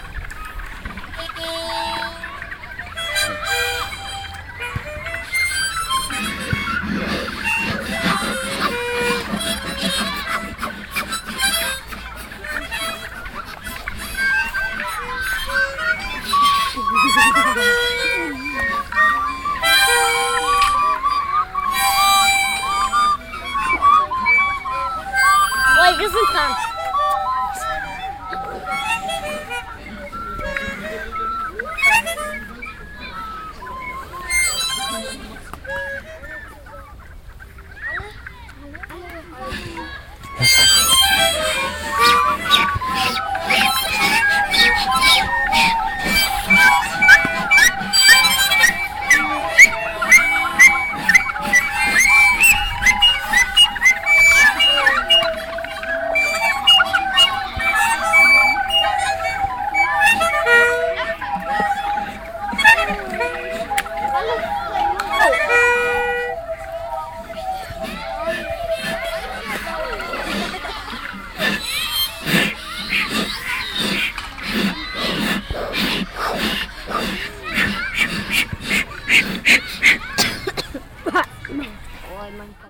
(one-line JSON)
{"title": "cologne, chorweiler, elementary school, kids air instrument orchestra", "date": "2007-06-22 15:37:00", "description": "kids rehearsing open air with different air instruments\nsoundmap nrw: social ambiences/ listen to the people in & outdoor topographic field recordings", "latitude": "51.03", "longitude": "6.90", "altitude": "43", "timezone": "Europe/Berlin"}